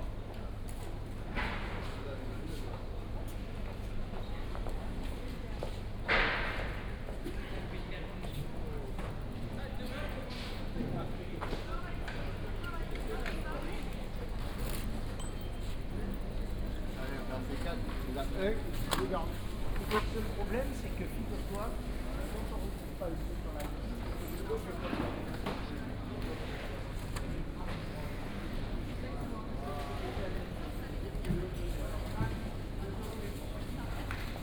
place Richelme, Aix-en-Provence, Fr. - market ambience
Place Richelme, food market setup, walk around the market
(Sony PCM D50, OKM2)
Aix-en-Provence, France, January 2014